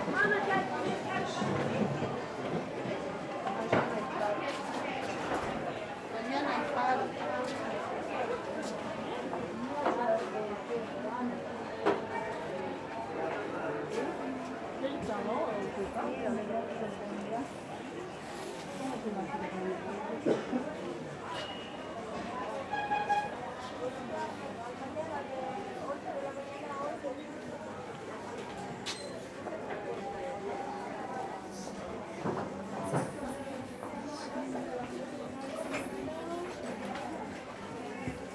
La Cancha, Cochabamba, Bolivia - La Cancha mercado / La Cancha market
La Cancha is a huge maze market in Cochabamba, and is notorious for pickpockets. This track was recorded discretely with 2 Rode Lavaliers stuck under either side of my shirt collar, going into a Zoom H4n I had strapped to my waist under my shirt.
I wasn't able to monitor while recording as this would have given the game away, so I'm pleased nothing clipped and there wasn't more clothing rustle.
4 April, ~14:00